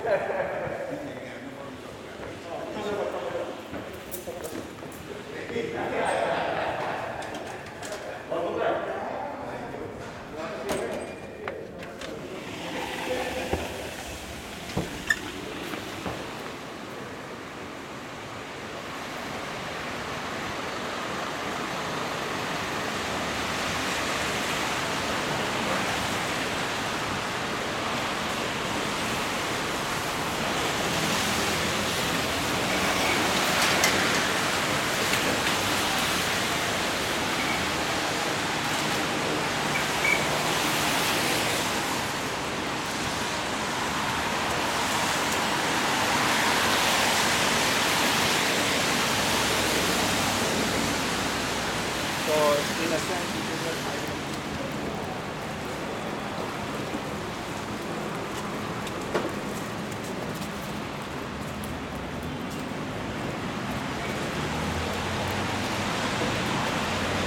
Exiting Grand Central-42nd Street Station through a less known passage that leads to a lobby of a building.